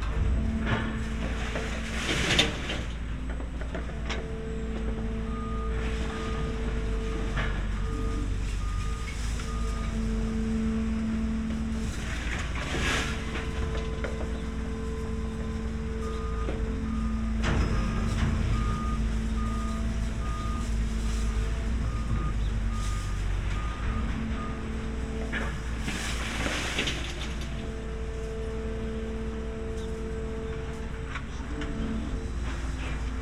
Maribor, Tezno, Ledina - scrapyard II
just curious how it sounds with another microphone
(SD702, AT BP4025)
Maribor, Slovenia, 28 May 2012, 13:45